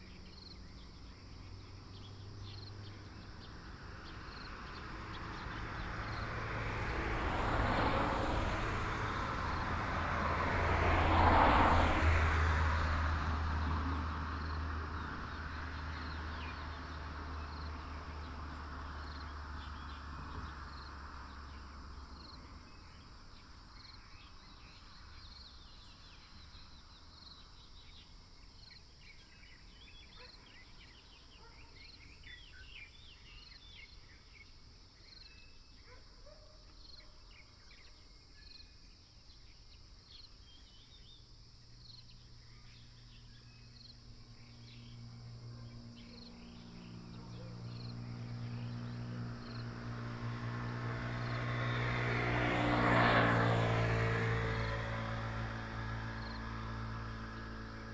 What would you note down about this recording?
Early in the morning next to the road, Insects, Chicken cry, Facing the reservoir, Dog sounds, A variety of birds call, traffic sound, Binaural recordings, Sony PCM D100+ Soundman OKM II